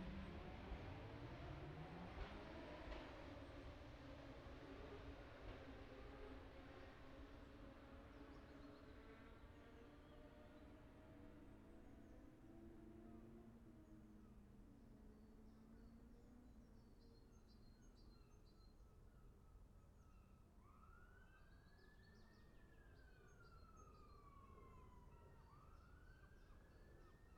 Scarborough, UK - motorcycle road racing 2012 ...
750cc+ practice ... Ian Watson Spring Cup ... Olivers Mount ... Scarborough ... binaural dummy head ... grey breezy day ...